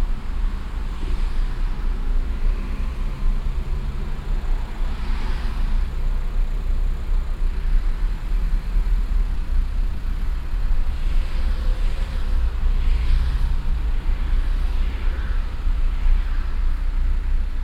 cologne, autobahnabfahrt - innere kanalstrasse, im verkehr
abfahrt von der a 57 in die kölner ampelzone - stehverkehr nachmittags - parallel ausfahrende fahrzeuge
soundmap nrw: social ambiences/ listen to the people - in & outdoor nearfield recordings
27 August 2008, 21:25